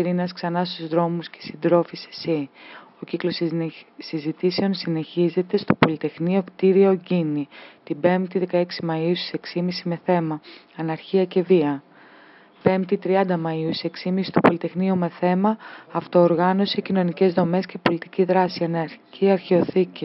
Greece, Athens, Zoodoxu pigis - Zoodoxu pigis street, reading